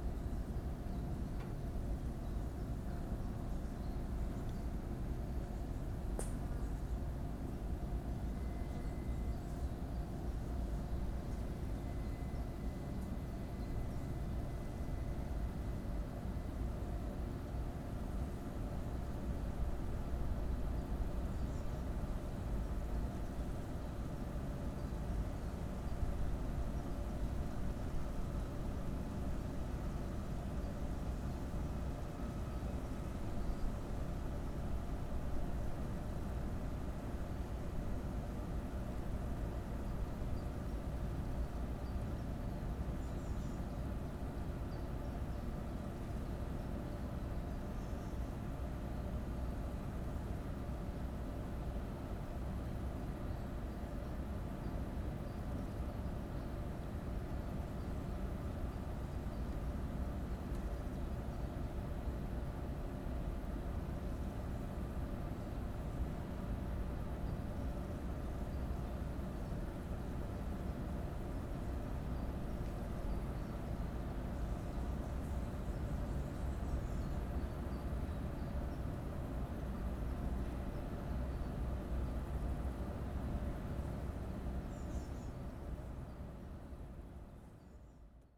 Lithuania, Kloviniai, at cell tower
buzzing of transformers and other (natural/unnatural) sounds
3 July, ~15:00